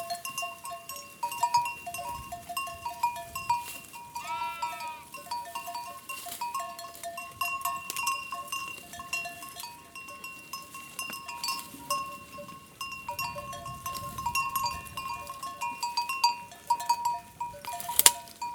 Coleja, Portugal - Rebanho de ovelhas Coleja, Portugal.
Rebanho de ovelhas, Coleja, Portugal. Mapa Sonoro do rio Douro. Sheeps herd in Coleja, Portugal. Douro River Sound Map